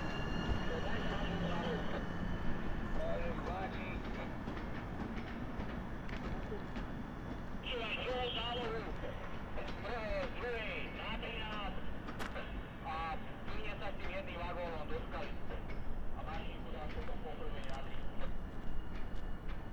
Tupého, Bratislava, Slovakia - Night activity in train yard Bratislava - hlavné
Night activity in train yard Bratislava - hlavné: railway worker with radio stanidng and waiting at rail switches; a train stops, honks the horn and pushes the carriages back, all the commands for the engine engineer can be heard through the radio of the railway worker; commands via station loudspeaker; the worker turns the rail switches; single engine comes and returns back.
Bratislava, Bratislavský kraj, Slovensko, 24 March 2016, 21:33